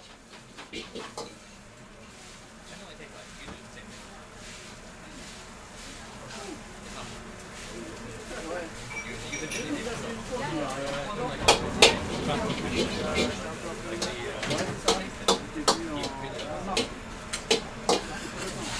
Rue Sainte-Ursule, Toulouse, France - Fufu Ramen
Fufu Ramen Japanese restaurant
captation : ZOOMH6
France métropolitaine, France, January 2022